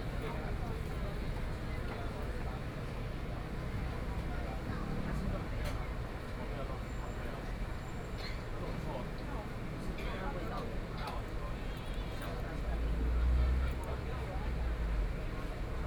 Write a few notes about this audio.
in the niu-rou-mian shop, Binaural recordings, Zoom H4n + Soundman OKM II